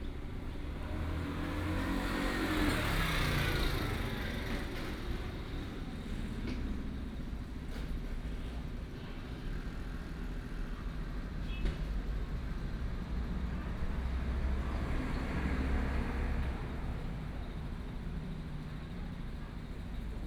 Ln., Ren’ai Rd., Tongxiao Township - In the alley
In the alley, Old market